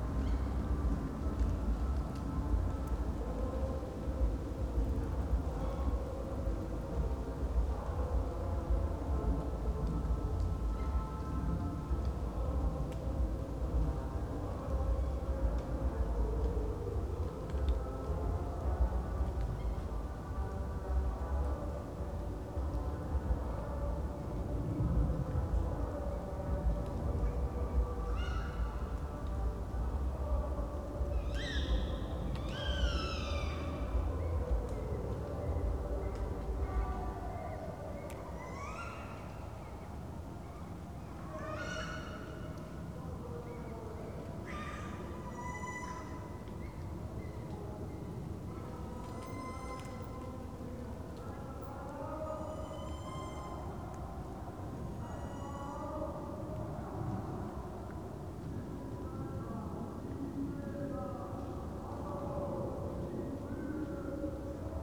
Hullerweg, Niedertiefenbach, Beselich - night ambience /w aircraft, Oktoberfest and owls
forest near village Niedertiefenbach, midnight ambience with sounds of an Oktoberfest party, the unavoidable aircraft crossing and one ore more Tawny owls calling, Strix aluco. But not completely sure here...
(Sony PCM D50, Primo EM172)
Hessen, Deutschland